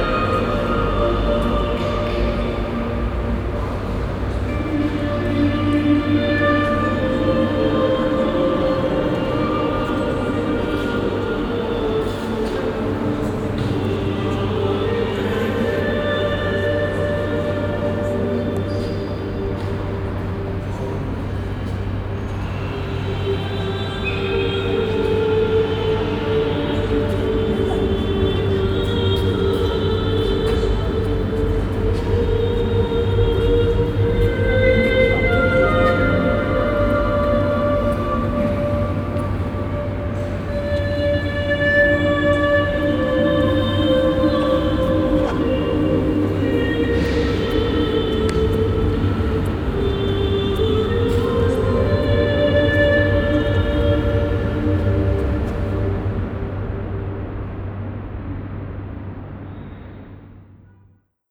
Ville Nouvelle, Tunis, Tunesien - tunis, cathedral, de st vincent de paul
Inside the Church hall. The sound of a choir recording that is being play backed inside and echoes inside the cathedral. At the end the traffic noise from outside slowly creeps into the hall again.
international city scapes - social ambiences and topographic field recordings